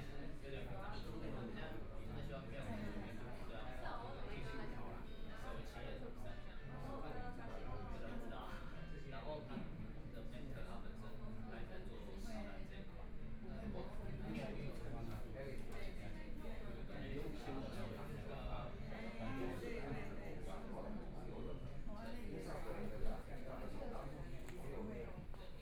Zhongshan N. Rd., Taipei City - In the coffee shop
In the coffee shop, Binaural recordings, Zoom H4n+ Soundman OKM II
Taipei City, Taiwan